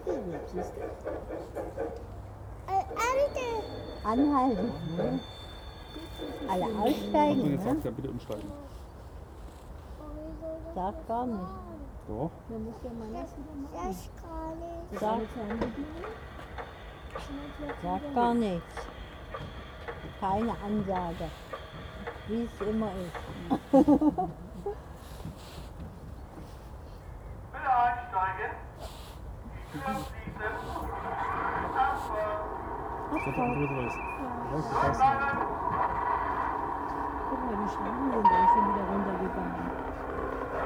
This front garden model train setup is stunning complete with station, different types of DB locomotives plus all the accompanying sounds. It attracts a small, but admiring, crowd of adults, children and cameras. alike.
Berlin, Germany, 13 November 2011, ~16:00